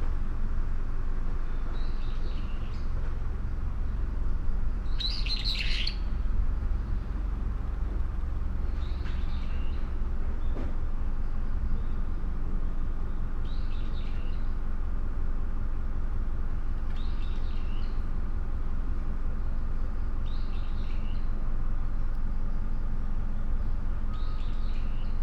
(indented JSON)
{
  "title": "Myoken-ji temple, Kyoto - near the daimon doors, bird",
  "date": "2014-10-31 12:07:00",
  "latitude": "35.03",
  "longitude": "135.75",
  "altitude": "66",
  "timezone": "Asia/Tokyo"
}